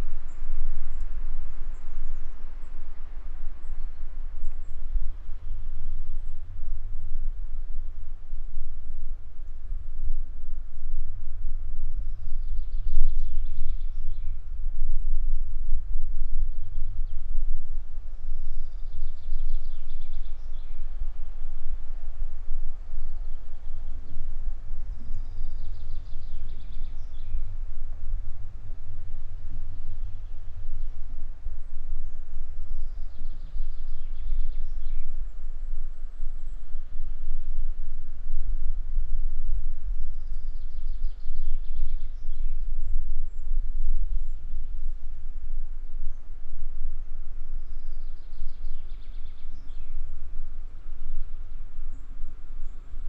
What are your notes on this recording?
Combined recording of omnis and geophone on the ground. The Devil's Pit is the deepest pit in Lithuania: it is funnel-shaped and is about 40 metres deep. The regular circular upper pit diameter is 200 metres. The peat layer at the bottom of the Pit is about 10 metres tall bringing the total depth of the Pit to about 50 metres. The origing is unknown: tectonic or meteoritic.